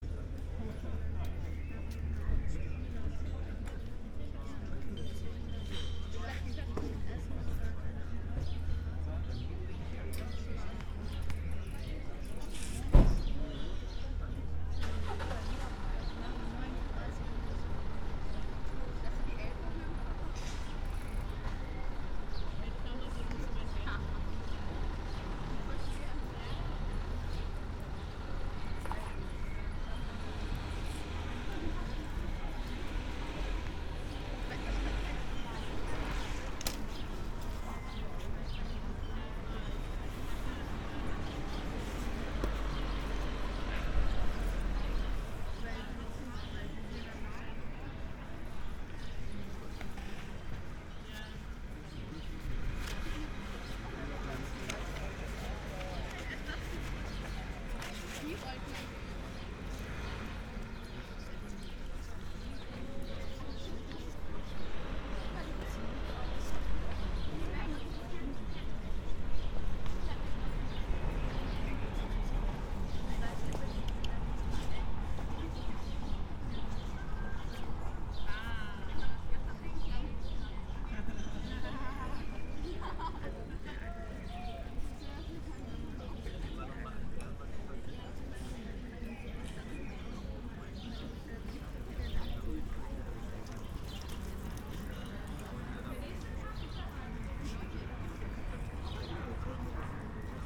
Ohlauer Str., Kreuzberg, Berlin - protests for refugees
ongoing protests and support for refugees in a nearby school. street ambience without cars, instead people are sitting around talking.
(log of the aporee stream, ifon4/tascam ixj2, primo em172)